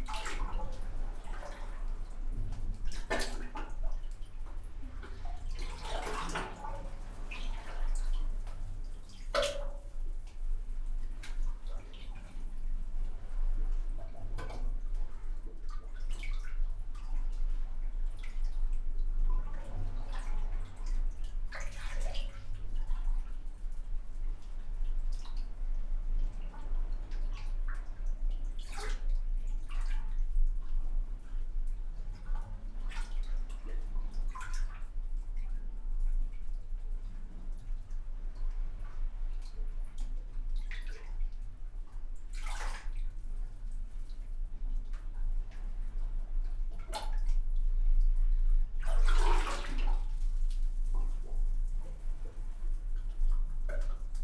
Wied Ix-Xaqqa, Birżebbuġa, Malta - water in concrete chamber, aircraft crossing
Wied Ix-Xaqqa, near Freeport Malta, concrete chamber, water flow, aircraft crossing
(SD702 DPA4060)